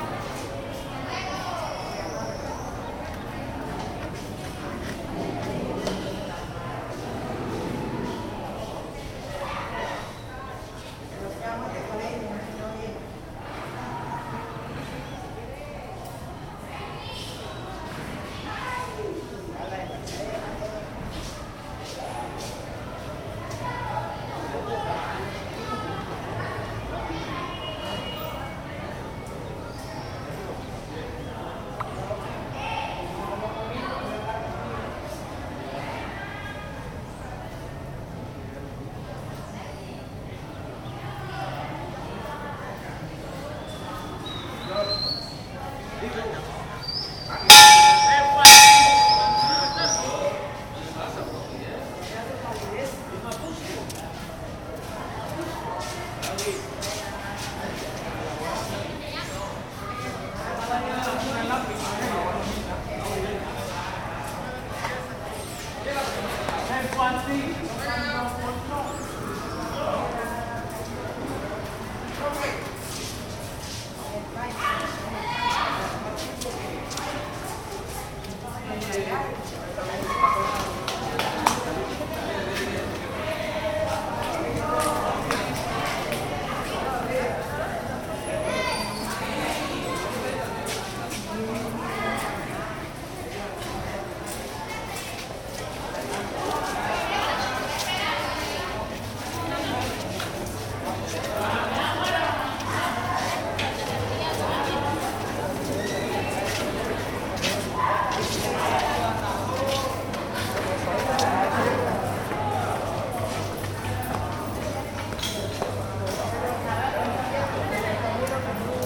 Colegio Pinillos, Bolívar, Colombia - Pinillos
Students prepare for finishing their day at the courtyard of old-time Colegio Pinillos
22 April 2022, ~11:00